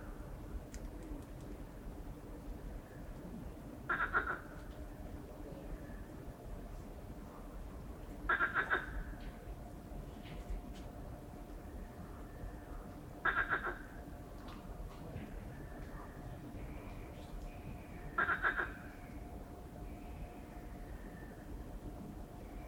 Botanical Gardens of Strasbourg University, Rue Goethe, Strasbourg, Frankreich - night frogs
night frogs in town during tteh confinement